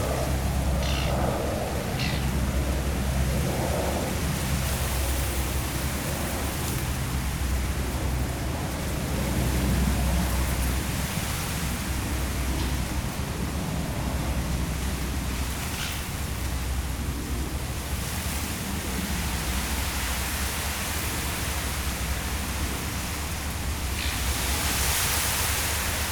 Wind in the reeds, in front of the Seine river. The discreet bird is a Eurasian reed warbler.